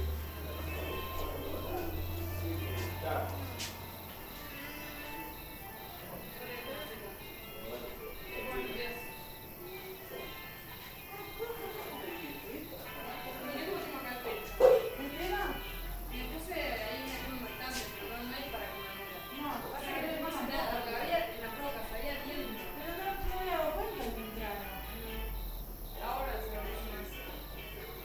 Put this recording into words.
Evening in the summerhouse. Friends are preparing dinner. The radio is playing. Some crickets.